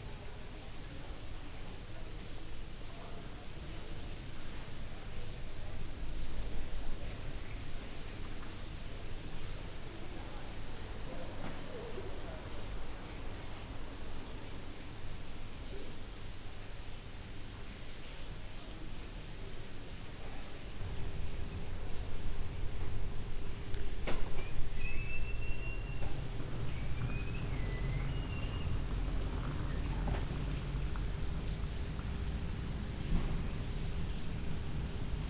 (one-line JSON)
{"title": "Perugia, Italy - quite recording in via dell'indipendenza", "date": "2014-05-23 13:03:00", "latitude": "43.11", "longitude": "12.39", "altitude": "462", "timezone": "Europe/Rome"}